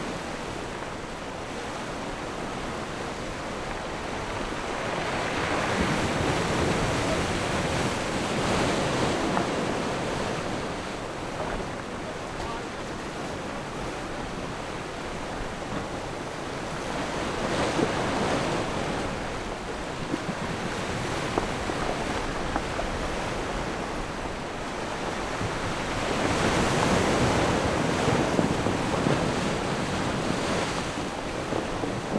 大漢據點, Nangan Township - Big Wave
On the bank, Big Wave
Zoom H6 +Rode NT4
連江縣, 福建省, Mainland - Taiwan Border, 14 October 2014, 14:20